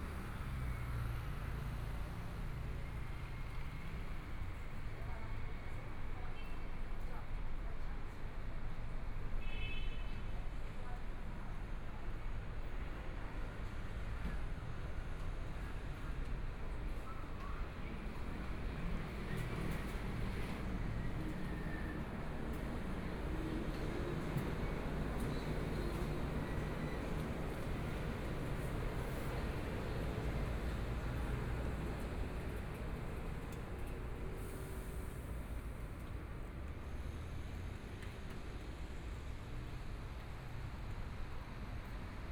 Environmental sounds, Traffic Sound, Binaural recordings, Zoom H4n+ Soundman OKM II